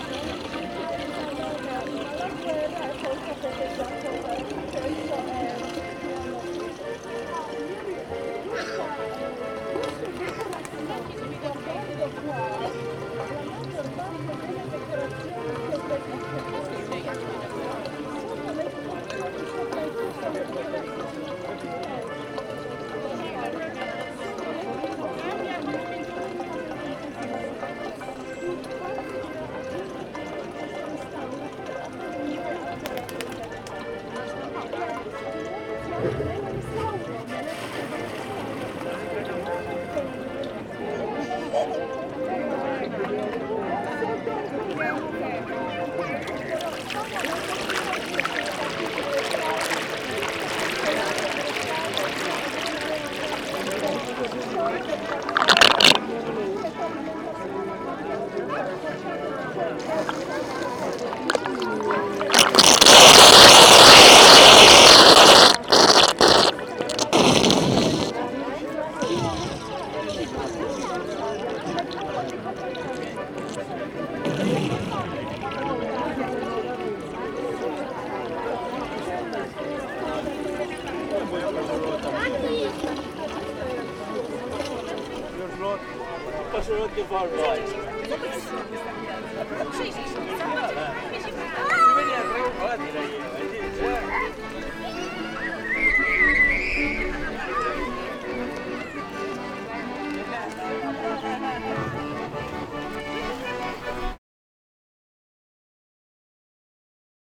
Stare Miasto, Warszawa, Pologne - Fontanna warszawskiej Syrenki
Fontanna warszawskiej Syrenki w Rynek Starego Miasta